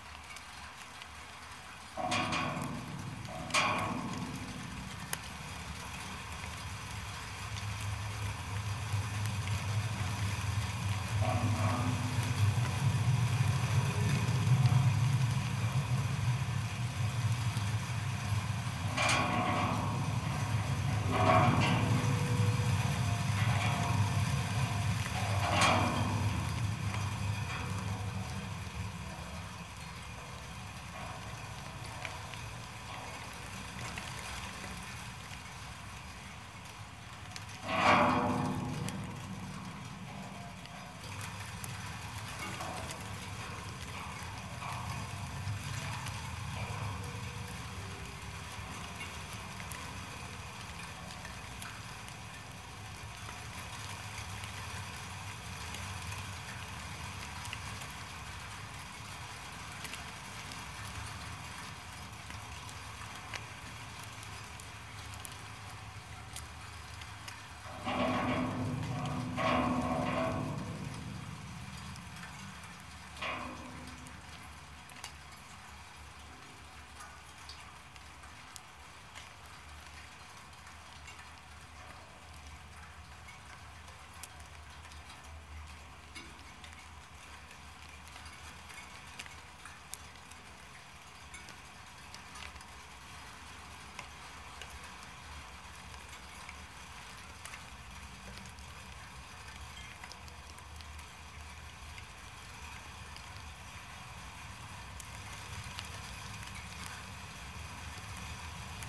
Snow on a wire construction fence
snow storm in Berlin during Radio Aporee Maps workshop. recorded with contact mics